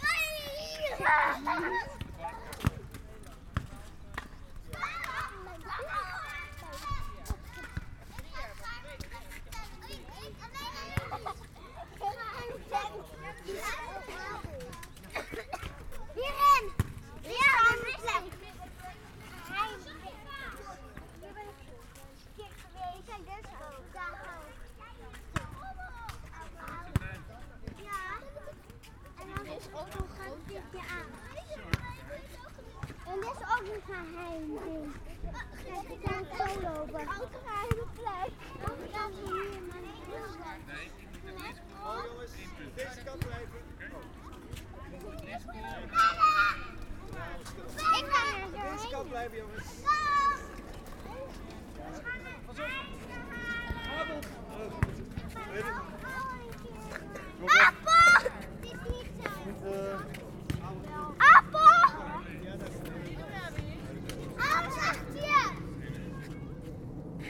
Voordijk, Abcoude, Netherlands - Children playing at local playground
Recorded with two DPA's 4061 as a binaural setup/format.